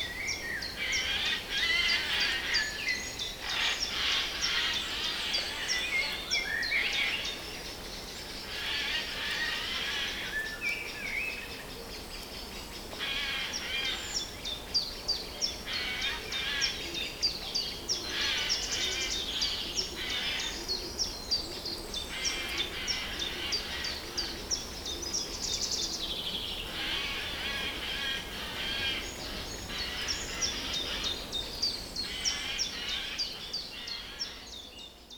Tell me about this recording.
spring forest ambience in Buki nature reserve. (roland r-07)